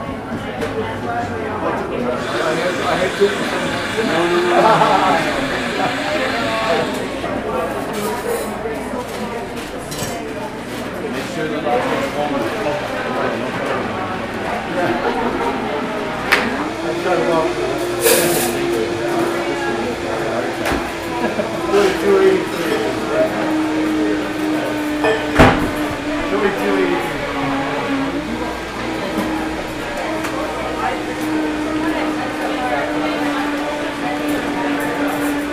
wow. a busy noontime at everyones favorite cafe: CAFE FINA. blanca rests out in the parking lot as chinqi really nails this one.
28 June 2018, 11:54